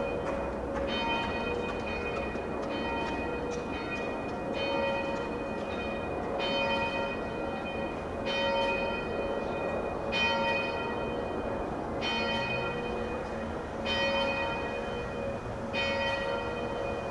Bells of Sv. Jakub on a Saturday 6 pm
Praha-Praha, Czech Republic